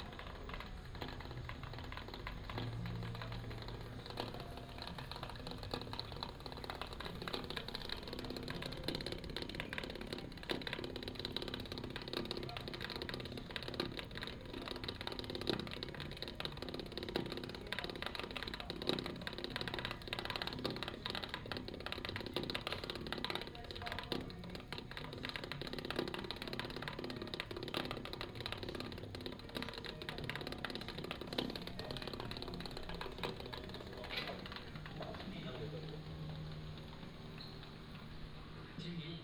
Paper Dome, Nantou County - Paper Dome
walking in the Paper Dome